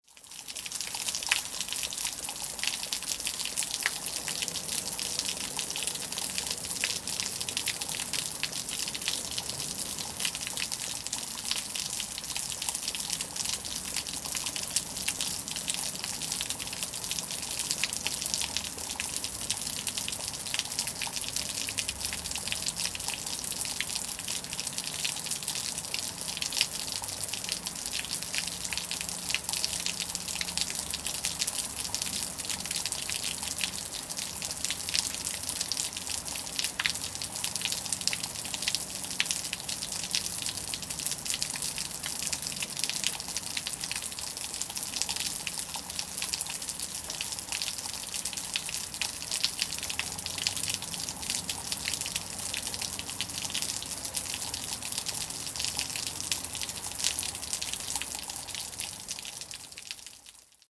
koeln, eaves gutter - Köln, eaves gutter
Heavy rain, damaged eaves gutter.
recorded july 3rd, 2008.
project: "hasenbrot - a private sound diary"